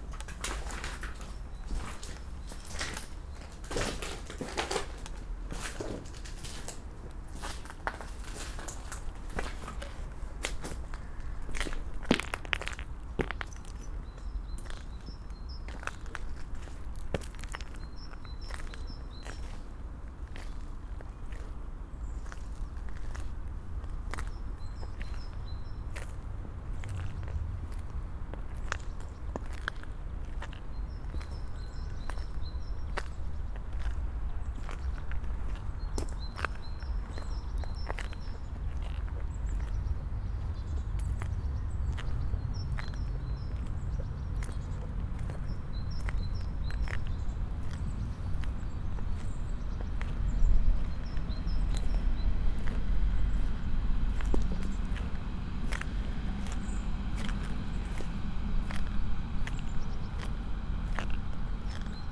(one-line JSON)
{"title": "abandoned container terminal, Berlin - short walk, ambience", "date": "2013-01-05 12:50:00", "description": "short walk on a former container termina area. the place seems abandoned, rotten buildings, lots of debris and waste, somes traces of past usage. but it's weekend, so things may be different on a workday.\n(SD702, DPA4060)", "latitude": "52.52", "longitude": "13.47", "altitude": "44", "timezone": "Europe/Berlin"}